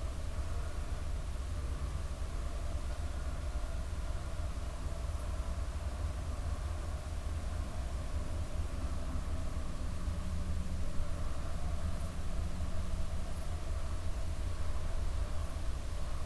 Linköping S, Schweden - Sweden, Stafsäter - morning atmosphere
Standing on a small meadow in front of the guest house in the early morning time. The overall morning silence with a group of darks barking constantly in the distance. Some traffic passing by - the sounds of insects and a wooden windplay moving in the mellow morning breeze.
soundmap international - social ambiences and topographic field recordings